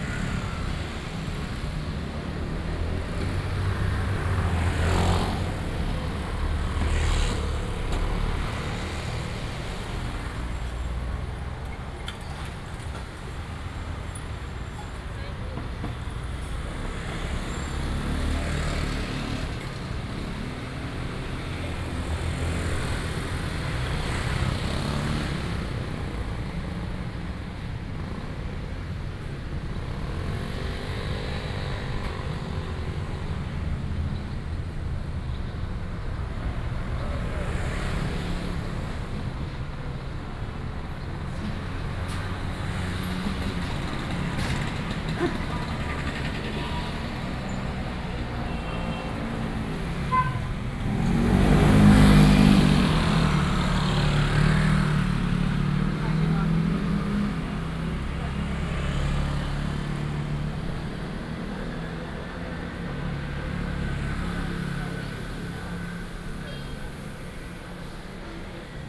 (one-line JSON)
{"title": "Guangming St., Xindian Dist., New Taipei City - Walking on the road", "date": "2015-07-25 18:04:00", "description": "From the main road to the small street, Various shops, traffic sound", "latitude": "24.96", "longitude": "121.54", "altitude": "29", "timezone": "Asia/Taipei"}